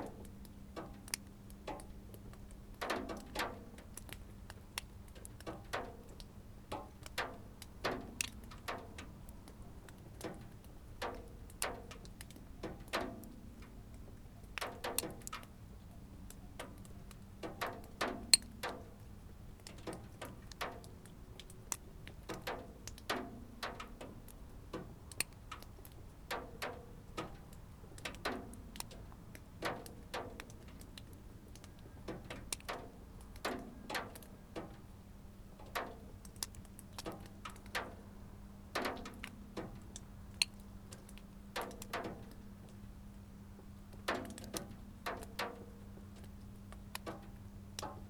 white frost melts and drips down from the roof